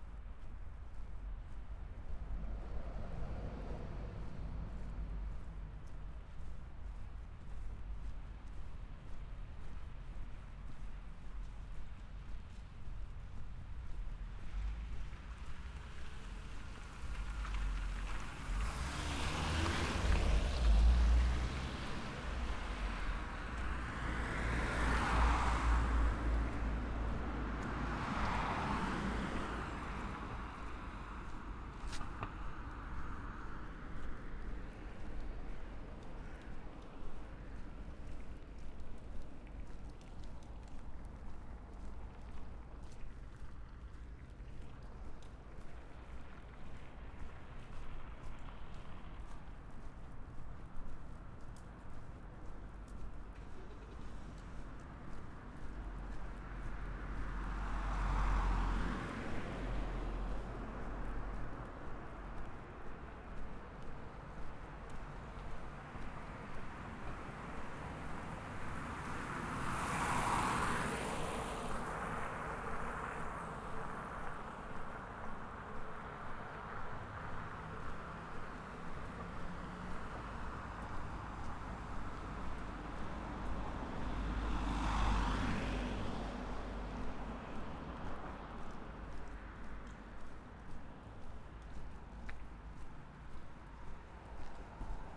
Tartu, Estonia - Soundwalk from Purde street to restaurant Aparaat
DPA 4061 microphones attached to the backbag, recorded while walking. Starts from room, going outsides, on streets and entering restaurant, joining others around table.
31 January, 12:00